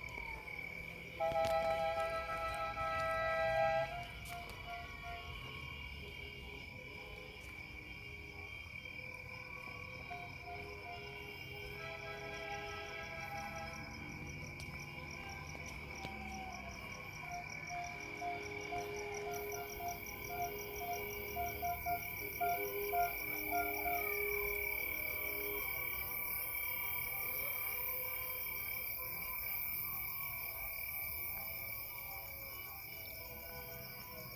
Rue Alsace Corre, Cilaos, Réunion - 20200313 210126 hystérie électorale CILAOS
hystérie électorale CILAOS ÎLE DE LA RÉUNION, enregistrée au smartphone.